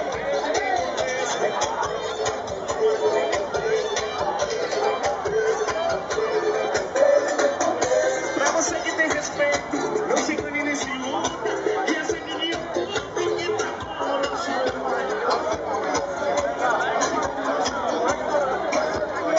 Tambaú Beach, João Pessoa - Paraíba, Brésil - Spring, Sunday, early night.
Típica comemoração popular na principal praia urbana de João Pessoa, após anunciado o vencedor das eleições para prefeito. Gravado andando com meu Lumix FZ 38. [A tipical popular commemoration to celebrate the new city's Mayor. Recorded walking with a Lumix FZ 38.]
João Pessoa - Paraíba, Brazil, 28 October 2012